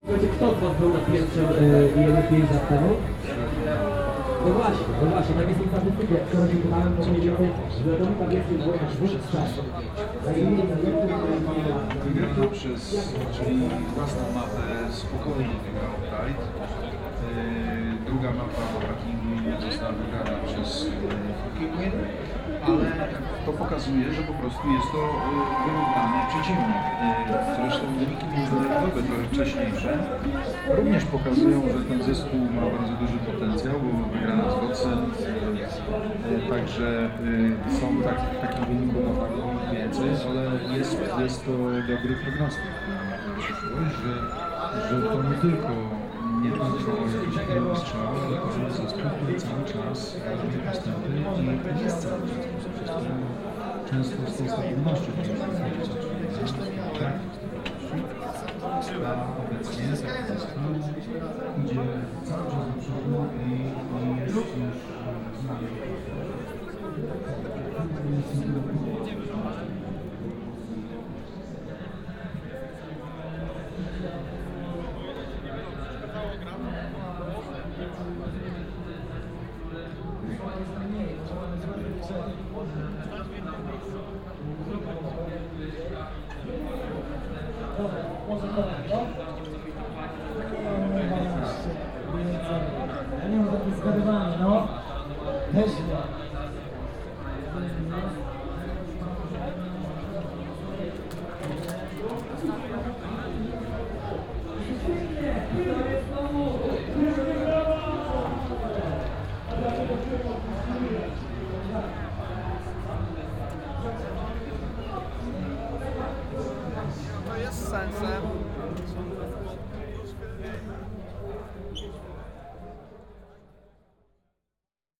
Congress Center, Katowice, Poland - (100 BI) IEM Masters
Binaural recording of a walk through a Katowice Congress center during an IEM Masters event.
Recorded with Soundman OKM on Sony PCM D100
województwo śląskie, Polska, 5 March, ~14:00